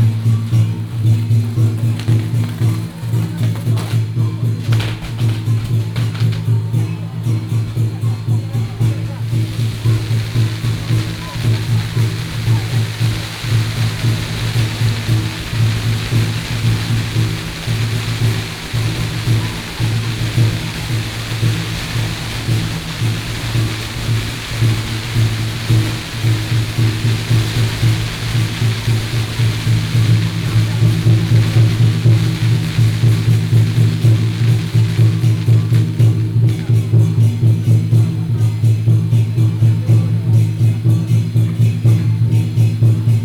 Hami St., Datong Dist., Taipei City - Firecrackers and fireworks
temple fair, Firecrackers and fireworks sound